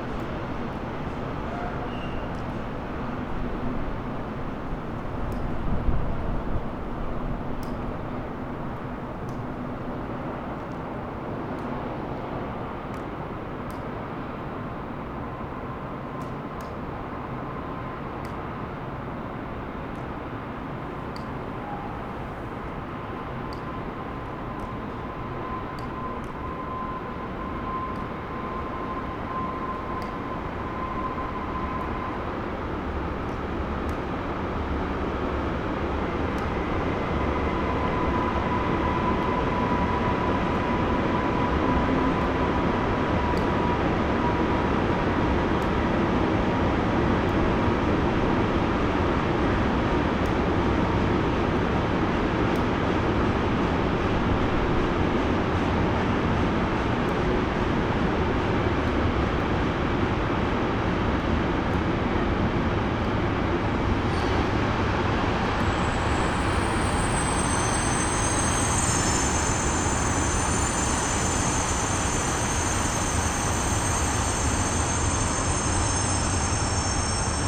Neustadt-Nord, Cologne, Germany - parking area near railroad
parking in front of dance center, people leaving the building, drops, trains, early winter night ambience
(Sony PCM D50)